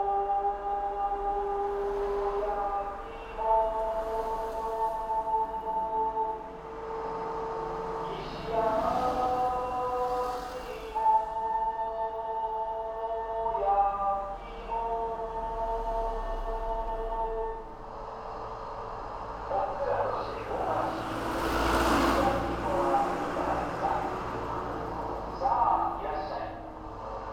chome nezu, tokyo - street window
Tokyo, Japan, 12 November 2013, 11:17